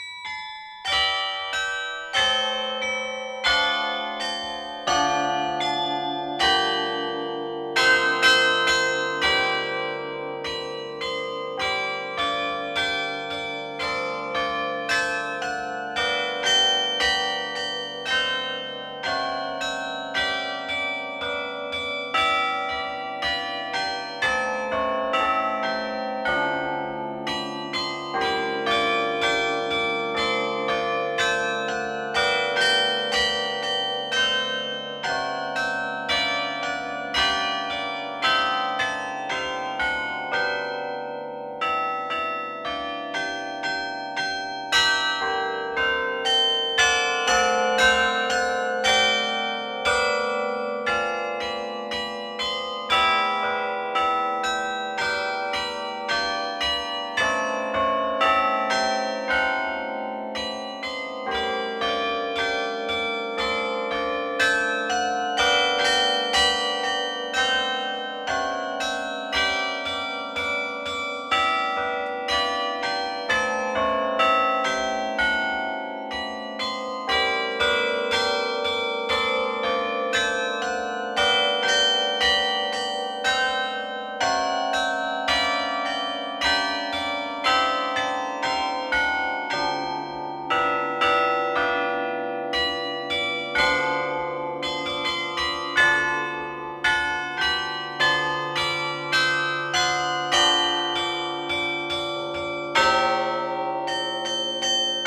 Beffroi de Bergues - Département du Nord
Maître carillonneur : Mr Jacques Martel
Hauts-de-France, France métropolitaine, France, 13 June 2020